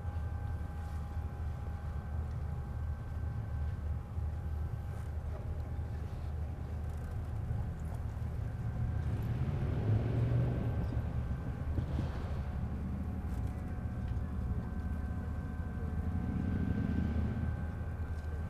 Ponta delgada, Azores-Portugal, marina sounds
Ponta Delgada, Azores, marina, waves, water, boats, creaking sounds